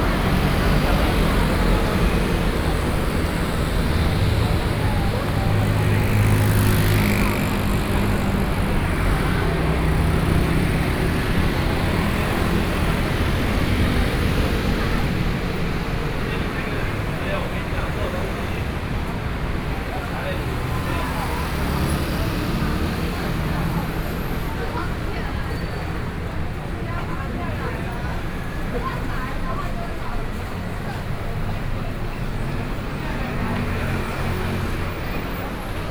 Sec., Yonghe Rd., Yonghe Dist., New Taipei City - soundwalk
walking in the street, Sony PCM D50 + Soundman OKM II